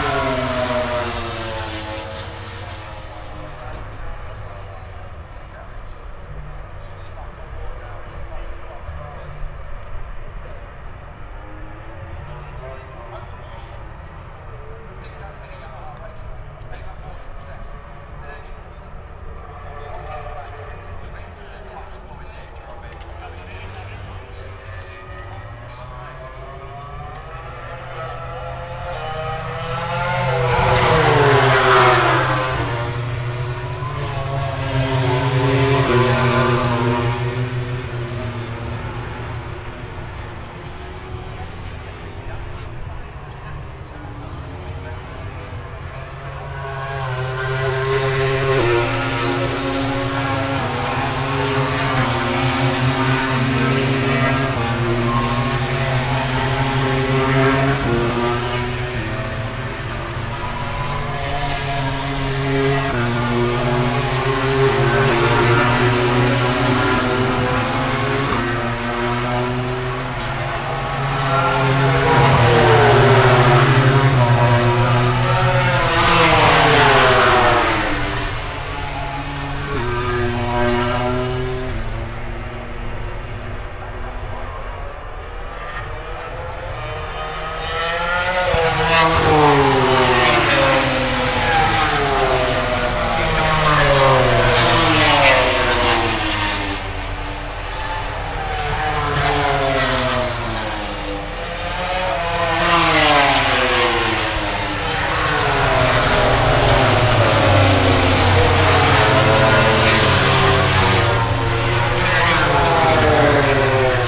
Silverstone Circuit, Towcester, UK - british motorcycle grand prix 2022 ... moto grand prix ...
british motorcycle grand prix ... moto grand prix free practice two ... dpa 4060s on t bar on tripod to zoom f6 ...